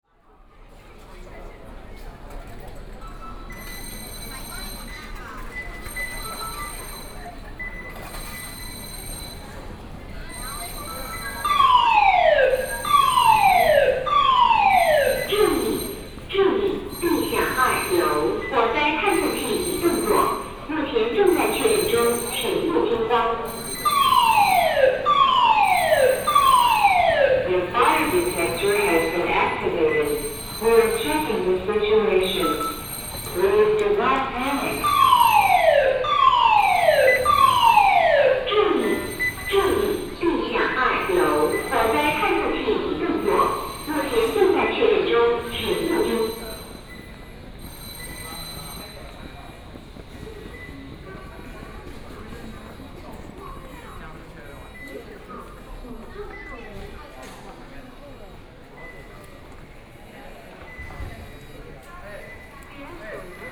Kaohsiung Main Station, Taiwan - Walking in the station
Walking in the station, Warning sound broadcasting
Kaohsiung City, Taiwan, 16 May 2014, ~12:00